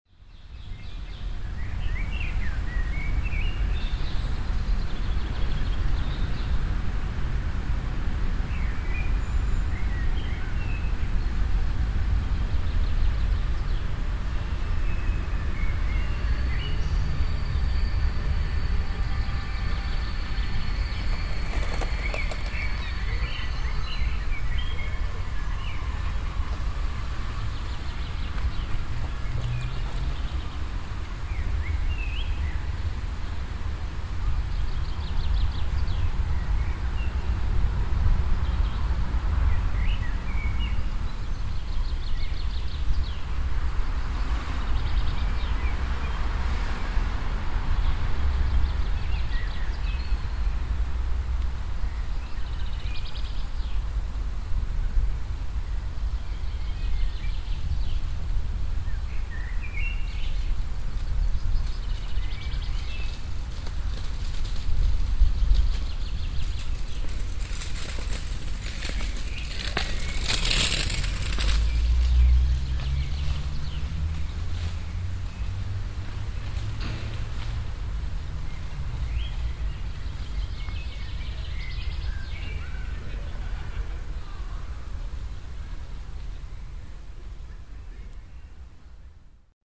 project: klang raum garten/ sound in public spaces - in & outdoor nearfield recordings
stadtgarten, vorderer hauptweg, 22 April 2008